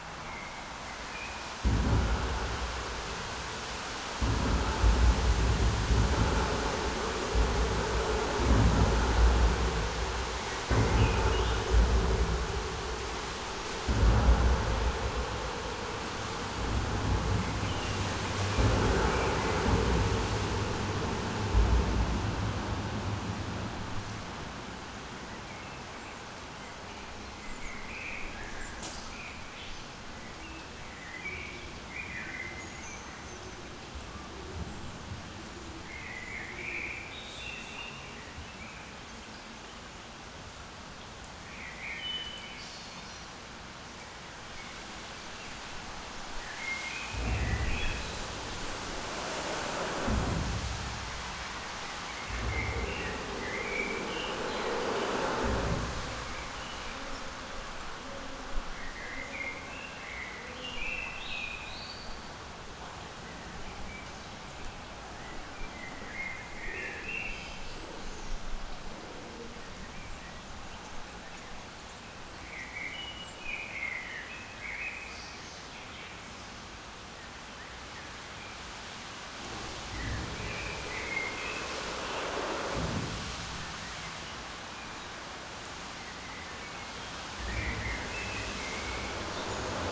Kiefholzbrücke, Berlin - traffic under bridge, rain
traffic heard under bridge (Kiefholzbrücke)
(Sony PCM D50 internal mics 120°)